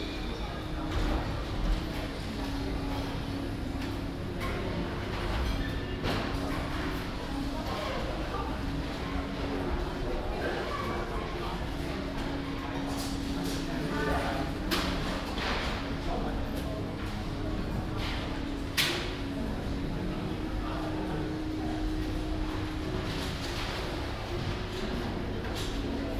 Eckernförder Str., Kiel, Deutschland - Bakery café in a supermarket
Café of a bakery shop inside a supermarket, chatting and walking people, clattering dishes, beeps from the cash registers and Muzak, from the acoustic point of view not a place to feel comfortable; Binaural recording, Zoom F4 recorder, Soundman OKM II Klassik microphone